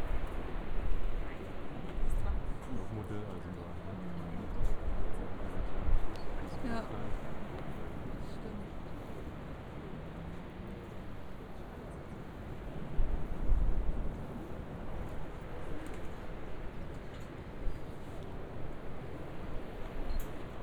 (binaural) tourists admiring the view and taking pictures on the observations deck. sounds of the crashing ways down the cliff many meters below.